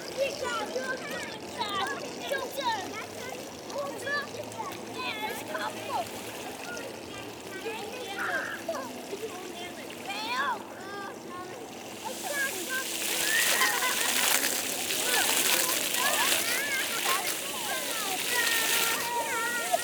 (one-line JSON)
{"title": "Leuven, Belgique - Aleatory fountains", "date": "2018-10-13 15:30:00", "description": "A lot of children playing into aleatory fountains, they are wet and scream a lot !", "latitude": "50.88", "longitude": "4.71", "altitude": "37", "timezone": "Europe/Brussels"}